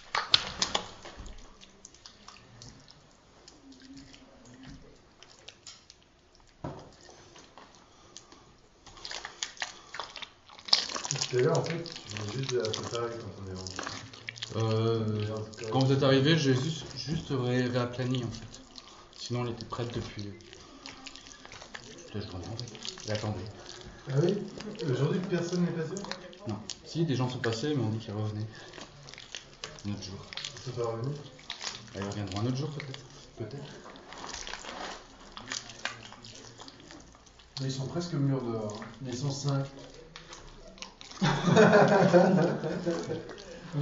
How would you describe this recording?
Fred Martin in the Kanal: 100 kg of clay serve as negative shape for a cast of peoples faces. More than 60 neighbours and friends came to have their face casted in plaster. Der Kanal, Weisestr. 59